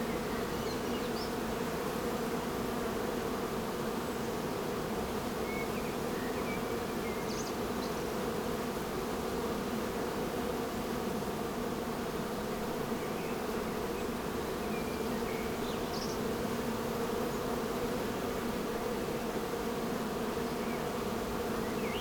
Botanischer Garten Oldenburg - bee hive
bee hives revisited the other day, mics a bit more distant
(Sony PCM D50, internal mics 120°)